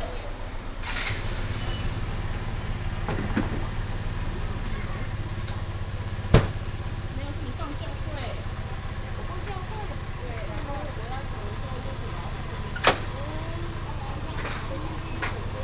中正路, Fuxing Dist., Taoyuan City - In the tourist shopping district
traffic sound, At the entrance to the sightseeing shops, Tourists
Taoyuan City, Taiwan, August 2017